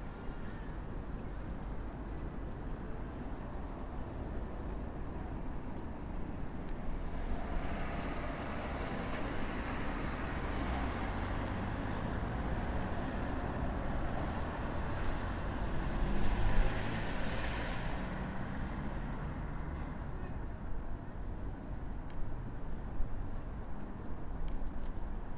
Santa Barbara, Lviv, Lvivska oblast, Ukraine - Cars and people on Sunday morning during covid lockdown
This is a week before Easter in the eastern church, and the Easter in the western church. There are some people walking around in masks, cars passing by. Light wind.
Recorded using ZOOM H1 with a self-made "dead cat".
Львівська міська рада, Львівська область, Україна, 12 April 2020, 09:10